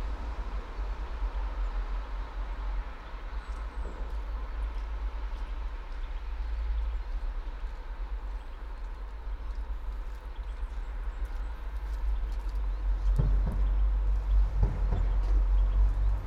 all the mornings of the ... - jan 19 2013 sat
Maribor, Slovenia